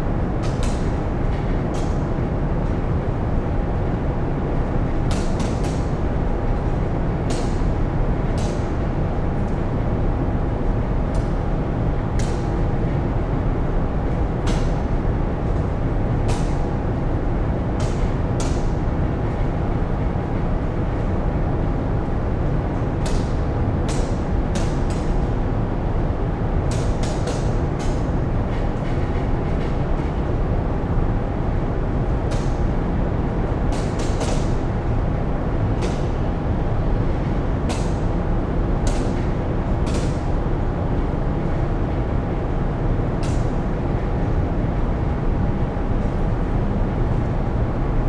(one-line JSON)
{"title": "Oberkassel, Düsseldorf, Deutschland - Düsseldorf, Opera rehearsal stage, studio 3", "date": "2012-12-15 15:30:00", "description": "Inside the rehearsal building of the Deutsche Oper am Rhein, at studio 3.\nThe sound of the room ventilation plus\nThe sound of the room ventilation with accent sounds from the roof construction as water bladders unregular on the top.\nThis recording is part of the intermedia sound art exhibition project - sonic states\nsoundmap nrw -topographic field recordings, social ambiences and art places", "latitude": "51.24", "longitude": "6.74", "altitude": "40", "timezone": "Europe/Berlin"}